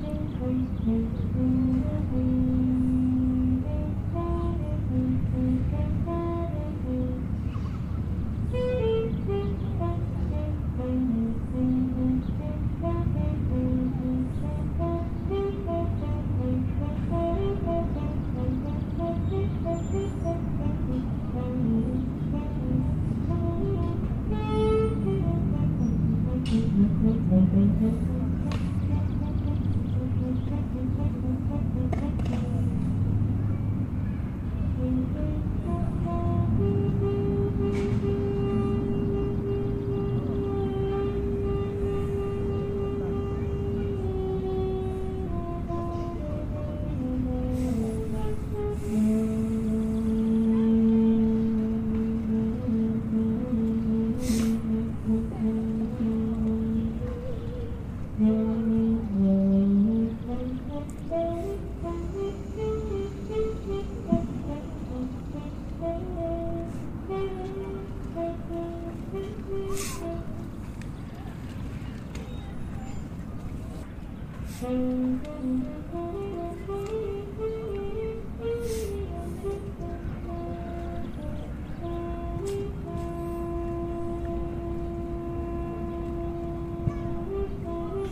Leikkikenttä Brahe, Porvoonkatu, Helsinki, Suomi - playground saxophone
Saxophone player at the children´s playground.
Helsingfors, Finland, 21 June 2015